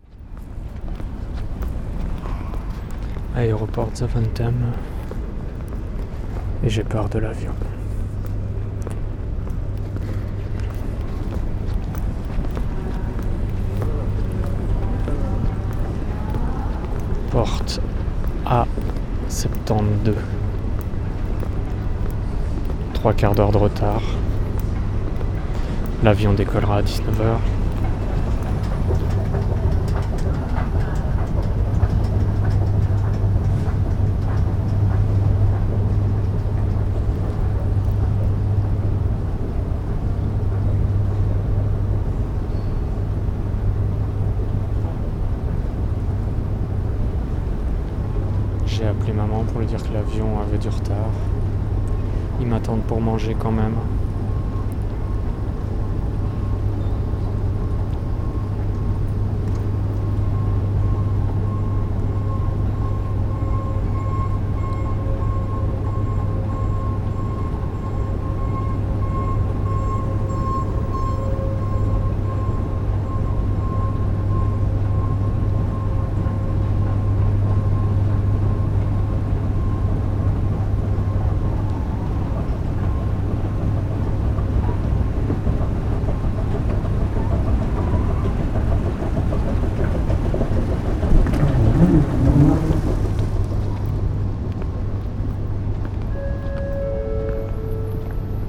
Brussels National Airport, just before flying with delay to Lyon.
à laéroport de Zaventem, avant de prendre mon vol qui avait du retard.
Steenokkerzeel, Belgium, November 2010